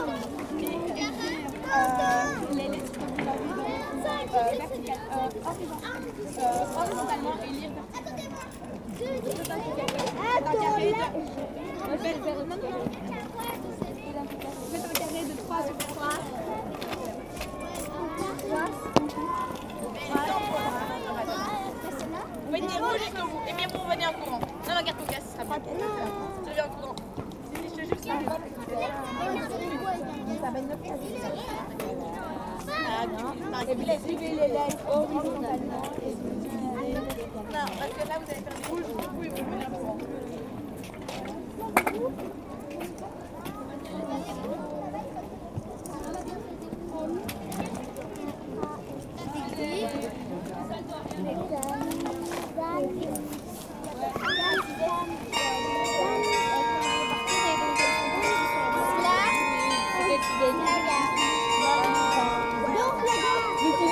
Young girl-scouts are playing on the principal square of this city. At 16h00 exactly, the chime is ringing. It's an old traditional song called La Petite Gayolle.
13 March 2016, 16:00